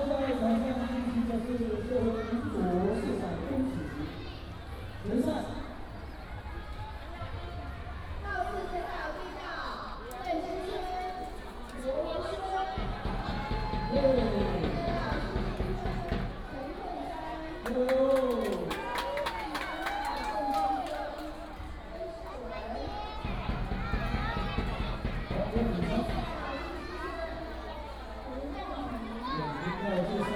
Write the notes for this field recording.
School and community residents sports competition, Cheer cheers